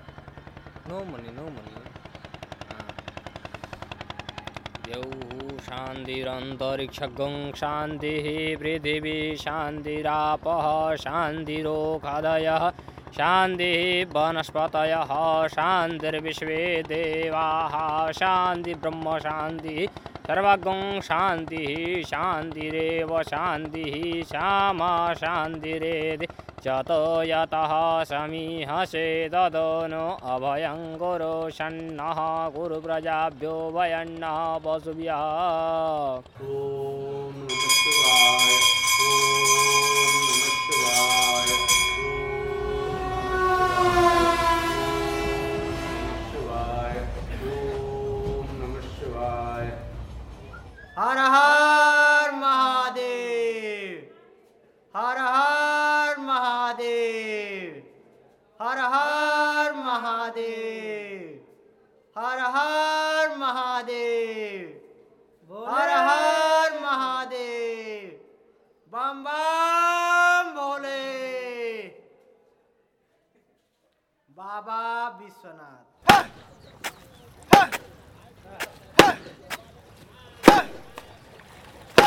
Dashashwamedh Ghat - Benares - India
Petit mix de diverses ambiances
Uttar Pradesh, India, March 2003